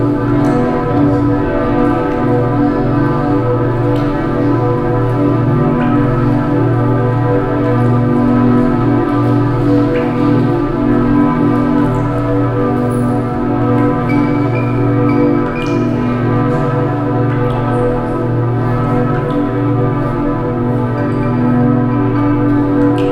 Gladbach, Mönchengladbach, Deutschland - mönchengladbach, alter markt, city church
Inside the old city church. The sound of evening bells coming from outside into the church hall accompanied by water sounds and finally a tune played on a bottle glass instrument.
soundmap nrw - social ambiences, art places and topographic field recordings